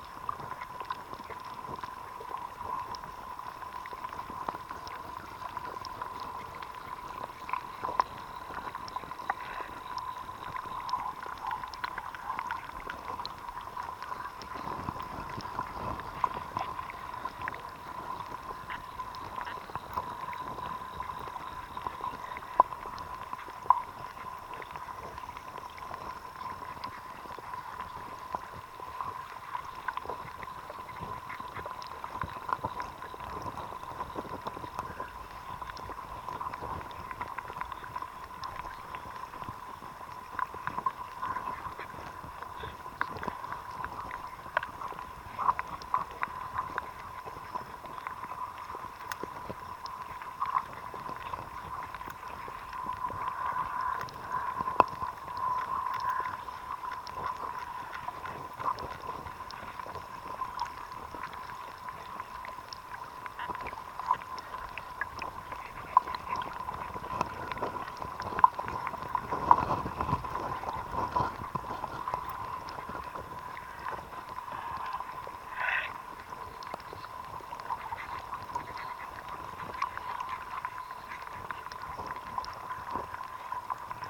HYdrophone recording in river Sventoji.
Utenos apskritis, Lietuva, 17 July 2022